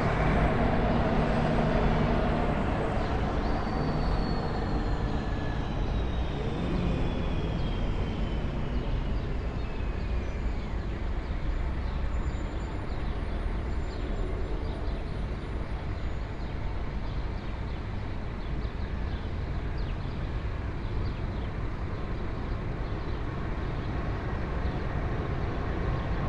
sunrise sonicscape from open window at second floor ... for all the morning angels around at the time
study of reversing time through space on the occasion of repeatable events of the alexanderplatz ambiance
Deutschland, European Union, May 2013